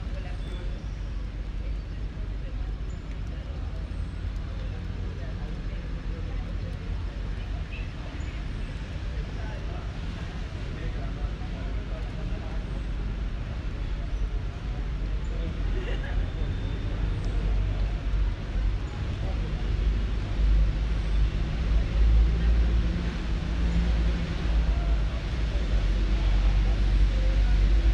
{
  "title": "Cra., Medellín, Antioquia, Colombia - Ambiente Lluvioso",
  "date": "2021-10-28 10:36:00",
  "description": "Información Geoespacial\n(latitude: 6.233785, longitude: -75.603743)\nAfuera del Centro Comercial Los Molinos\nDescripción\nSonido Tónico: Lluvia\nSeñal Sonora: Gente hablando\nMicrófono dinámico\nAltura 1.50\nDuración: 3:00",
  "latitude": "6.23",
  "longitude": "-75.60",
  "altitude": "1537",
  "timezone": "America/Bogota"
}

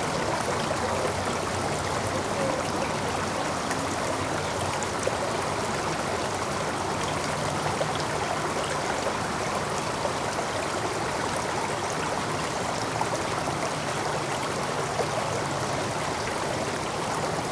{
  "title": "Rottenwood Creek Trail, Atlanta, GA, USA - Small Stream",
  "date": "2020-10-01 15:57:00",
  "description": "This is a small stream that flows under part of the Rottenwood Creek Trail and into the river. The recorder was placed to the side of the trail right next to the stream. You can hear the water flow right to left, as well as some people people walking on the right.\nThis audio was recorded with the unidirectional mics of the Tascam Dr-100mkiii. Minor EQ was done to improve clarity.",
  "latitude": "33.87",
  "longitude": "-84.45",
  "altitude": "250",
  "timezone": "America/New_York"
}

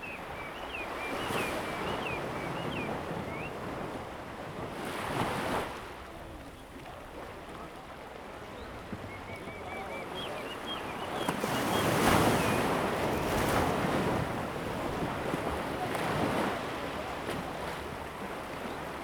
{
  "title": "磯崎村, Fengbin Township - Small pier",
  "date": "2014-08-28 16:41:00",
  "description": "Small pier, Waves, Birdsong sound, Tourists, Very hot weather\nZoom H2n MS+XY",
  "latitude": "23.70",
  "longitude": "121.55",
  "altitude": "9",
  "timezone": "Asia/Taipei"
}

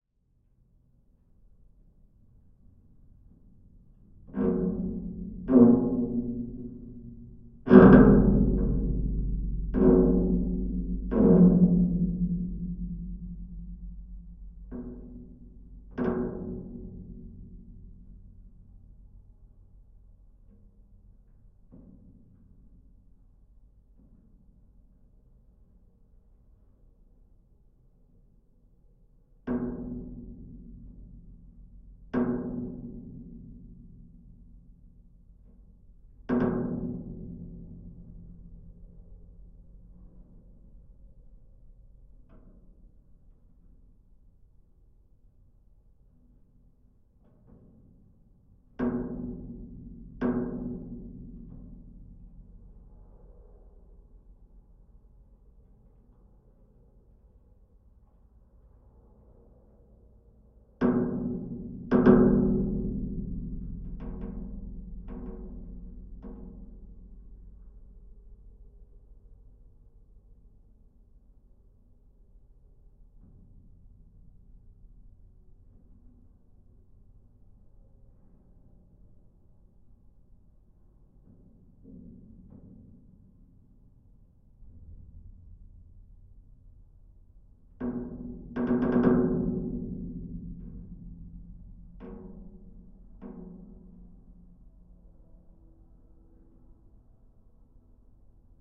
{
  "title": "Utena, Lithuania, metallic doors",
  "date": "2022-09-10 17:30:00",
  "description": "abandoned factory building (remnant of soviet era) in industrial part of my town. big, half open metallic doors swaying in a wind. contact recording",
  "latitude": "55.49",
  "longitude": "25.64",
  "altitude": "137",
  "timezone": "Europe/Vilnius"
}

